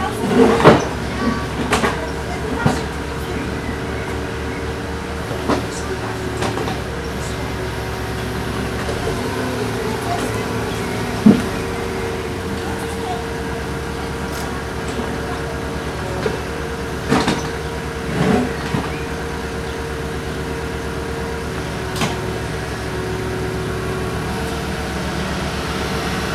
Büchenbach, Erlangen, Deutschland - street works - laying fibre optic calbes
Some soundclips i recorded the last days. I combined them to one bigger part with little breaks.
There are different sounds of road works while laying fiber optic cables into the ground. (sawing machine, little earth mover, drilling etc.)
Deutschland, European Union, 4 June